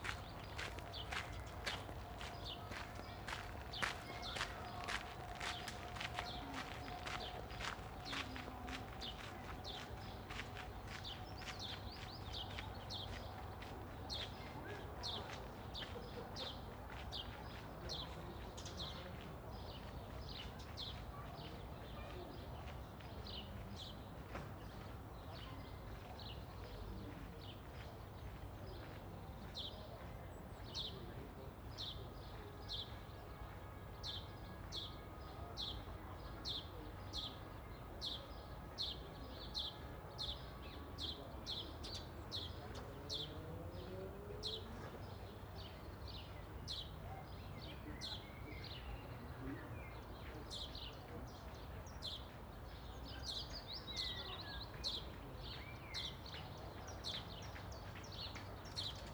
An den Knabenhäusern, Berlin, Germany - Riverside path, evening sounds in the gravel
Passing walkers, joggers and cyclists on gravel
28 April, 19:58